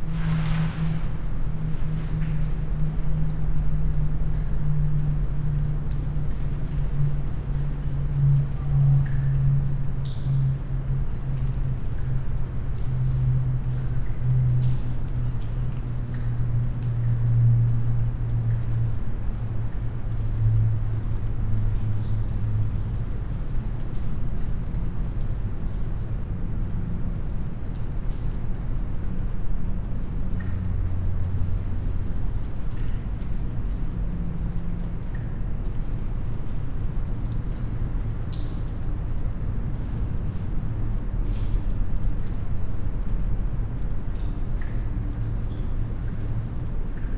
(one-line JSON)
{"title": "Punto Franco Nord, house, Trieste, Italy - somewhere inside, drops", "date": "2013-09-11 16:11:00", "latitude": "45.67", "longitude": "13.76", "altitude": "3", "timezone": "Europe/Rome"}